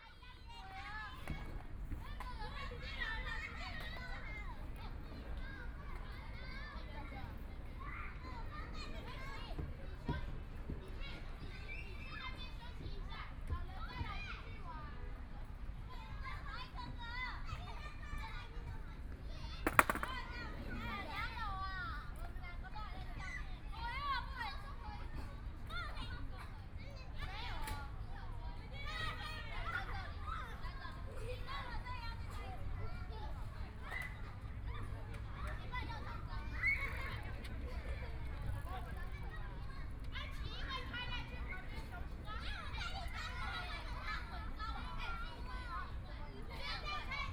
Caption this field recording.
Kids play area, Binaural recordings, Zoom H4n+ Soundman OKM II